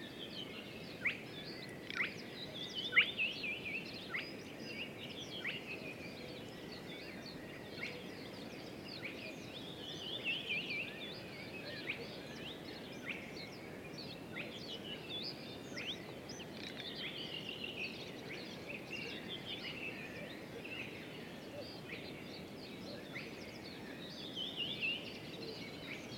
Dawn chorus in the bog, south Estonia
eurasian bittern and other birds out in the bog